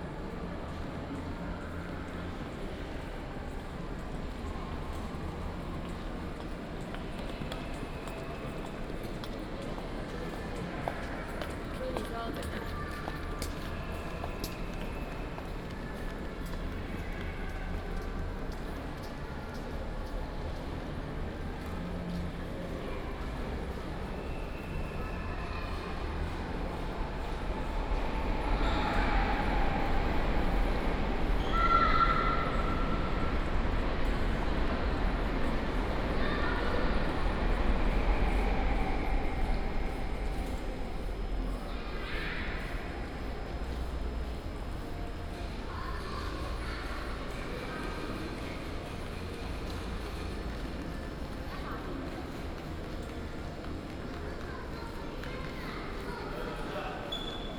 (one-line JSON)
{"title": "Xinwuri Station, Taichung City - In the station hall", "date": "2016-03-27 17:34:00", "description": "In the station hall", "latitude": "24.11", "longitude": "120.61", "altitude": "28", "timezone": "Asia/Taipei"}